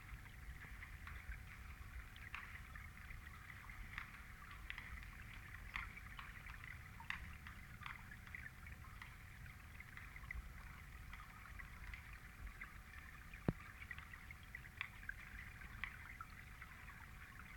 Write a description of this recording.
Underwater hydrophone recording of snow falling onto the lake just off the beach.